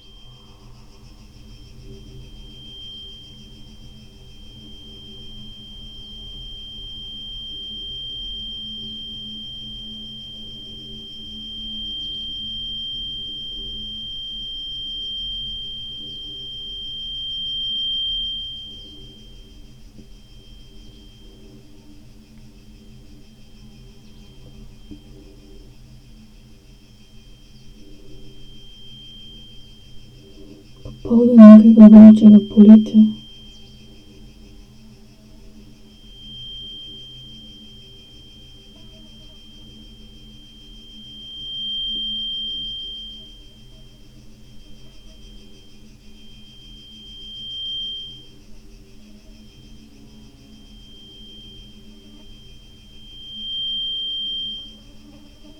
quarry, Marušići, Croatia - void voices - stony chambers of exploitation - borehole, microphony, words